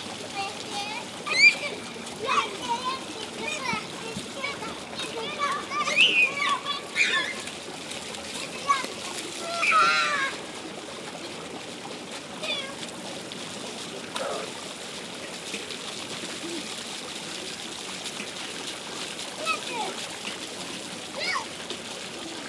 Children loudly playing into the fountains.
Leuven, Belgium, 13 October 2018